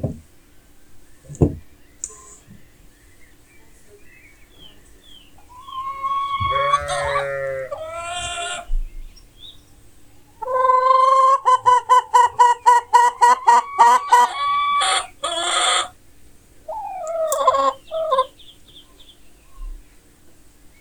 These ladies where making fresh eggs
North West England, England, United Kingdom, 27 March 2022, ~07:00